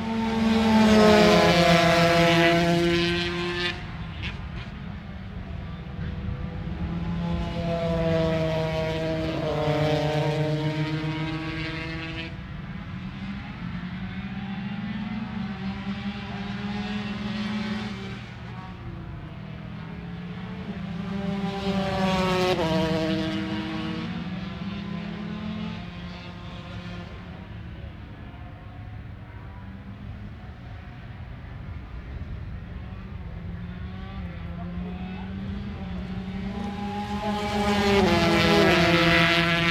Unnamed Road, Derby, UK - British Motorcycle Grand Prix 2004 ... 125 free practice ...
British Motorcycle Grand Prix 2004 ... 125 free practice ... one point stereo mic to minidisk ... date correct ... time optional ...